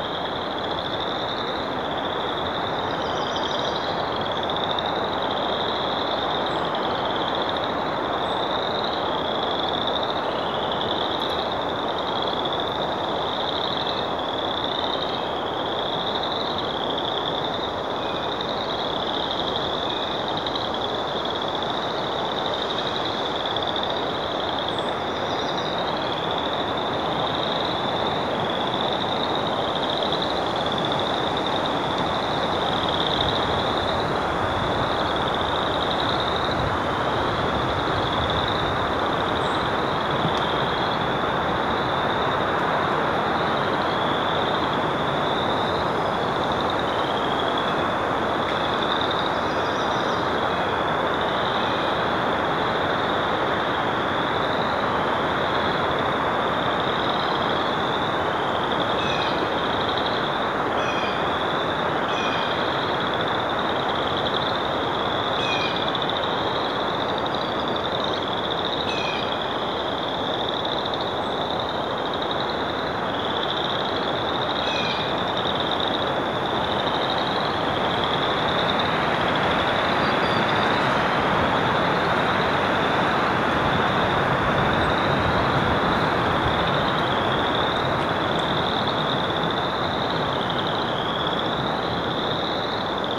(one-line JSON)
{"title": "Clam Lake, WI, USA - Former site of US Navy ELF antenna array", "date": "2013-05-24 09:56:00", "description": "A very active frog pond, along a clearing. This clearing, and miles more like it, are the only evident remnant of the world's largest antenna array - The Clam Lake US Navy Extremely Low Frequency (ELF) Antenna Array. This array, miles of above-ground wiring, paired with a sister site near Witch Lake in Upper Peninsula Michigan, generated wavelengths 5000-6000 kilometers long. These subradio waves allowed for communications between submarines around the world to communicate to bases in the US.Health effects of ELF waves on humans and animals alike, especially wales and dolphins, are still unknown. Due to this site being extremely unpopular with the residents of Wisconsin and Michigan, this site was deactivated in 2004 after 19 years of use. The cleared paths are now used by snowmobilers and elk hunters.", "latitude": "46.16", "longitude": "-90.87", "altitude": "453", "timezone": "America/Chicago"}